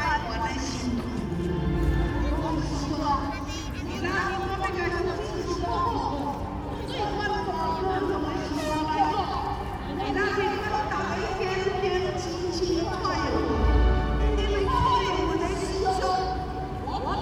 Opposition election party, Rode NT4+Zoom H4n
台北市 (Taipei City), 中華民國, 8 January, ~21:00